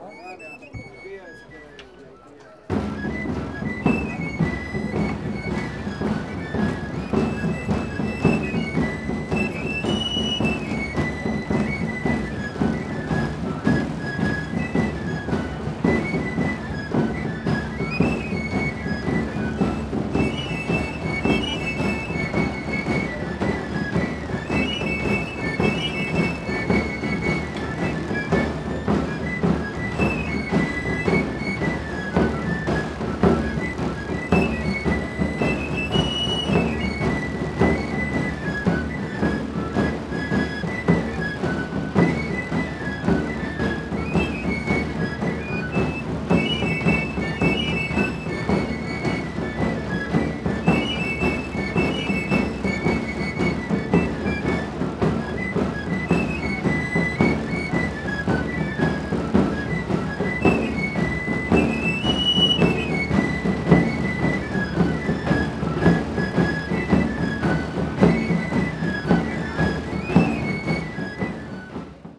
Allauch, France - Fete de la Saint Eloi sortie église
Sortie de la messe pour la Saint Eloi à Allauch
Exit of the Mass for the Saint Eloi in Allauch
26 June 2016, 11:23